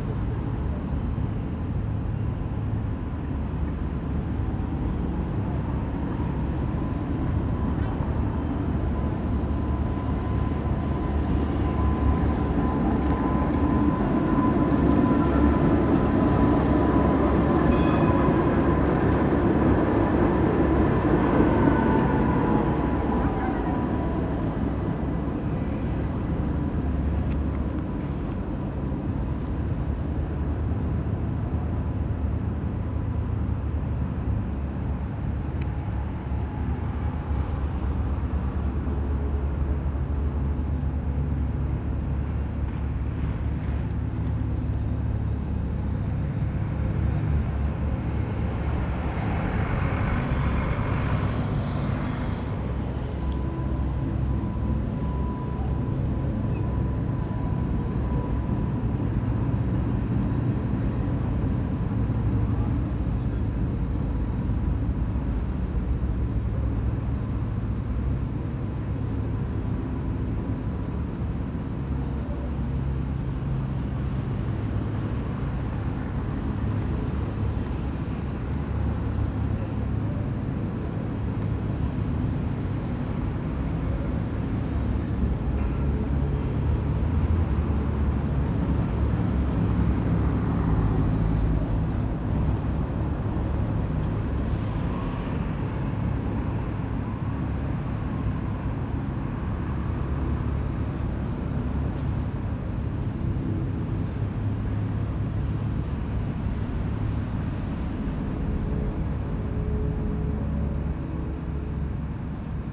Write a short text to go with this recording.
am lindenauer markt. straßenbahnen und autos, im hintergrund schwatzende kinder.